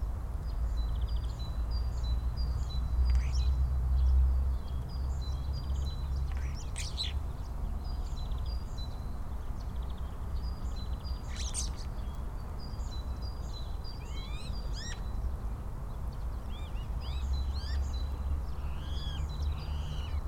парк Изумрудный, Барнаул, Алтайский край, Россия - song of starling 09-04-2019
Starling sings, some other birds in the background, distant traffic sounds. Emerald park (парк "Изумрудный"), Barnaul.
Barnaul, Altayskiy kray, Russia, April 2019